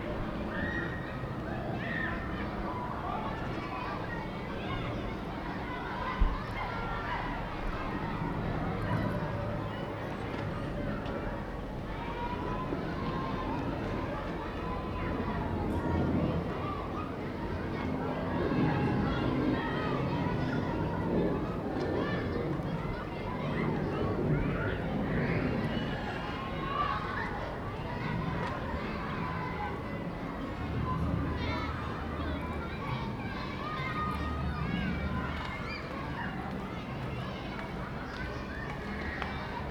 You can hear children playing in a nearby school, birdcall, footsteps, the drone of an aeroplane, and cars.
Recorded on a staircase in the Centre International de Valbonne.
Recorded with a ZOOM H1